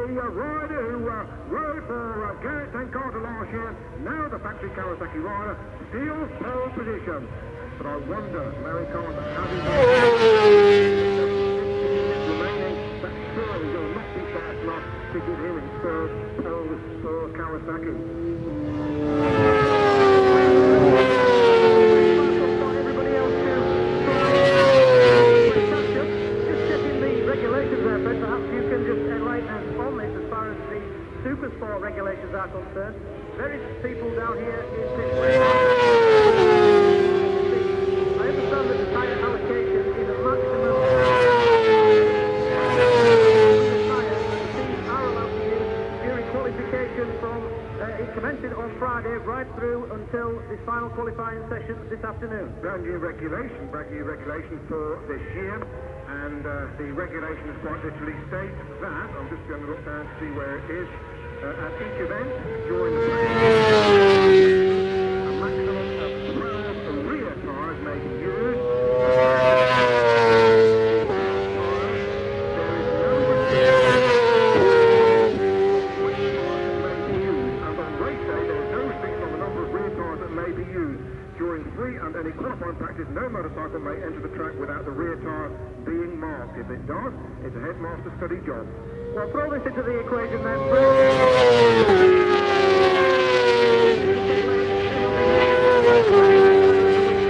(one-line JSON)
{"title": "Silverstone Circuit, Towcester, UK - WSB 2003... Supersports ... Qualifying ... contd ...", "date": "2003-06-03 14:00:00", "description": "WSB 2003 ... Supersports ... Qualifying ... contd ... one point stereo mic to minidisk ... date correct ... time optional ...", "latitude": "52.07", "longitude": "-1.02", "altitude": "152", "timezone": "Europe/London"}